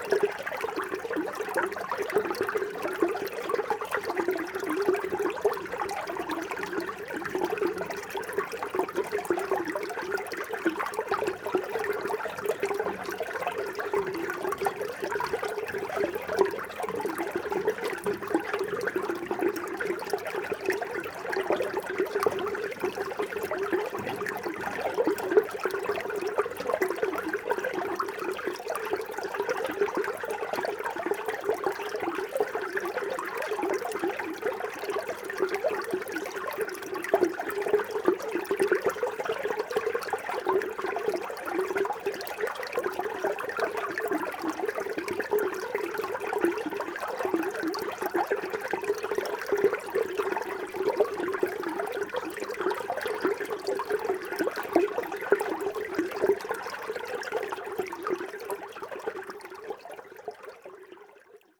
Ottange, France - Strange pipe
In an underground mine, a water stream is busting in a pipe. The microphones are buried into the pipe. As this, you can hear the inside ambience. You couldn't really hear this when walking. You have just to spot the pipes, as they often offer quaint vibes. After, you're lucky or not, as some pipes are dreary.
26 March 2016